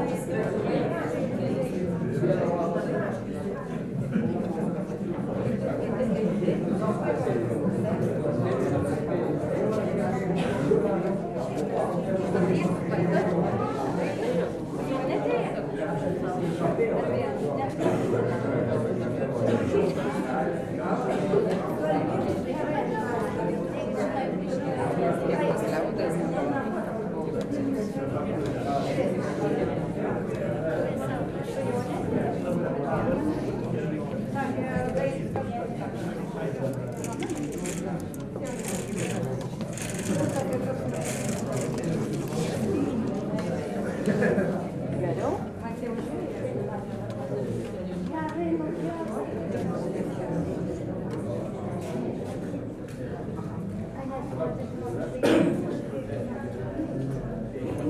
people waiting for the concert to begin